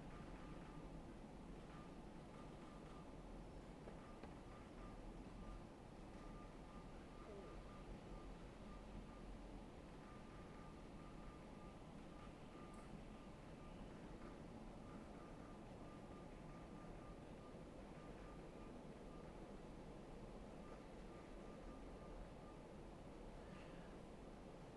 St Mary's, Whitchurch-on-Thames - Meditation in St Mary's Church
A fifteen minute meditation at St Mary's Church in Whitchurch. Recorded on a SD788T with a matched pair of Sennheiser 8020's either side of a Jecklin Disk.
Reading, UK, 22 June 2017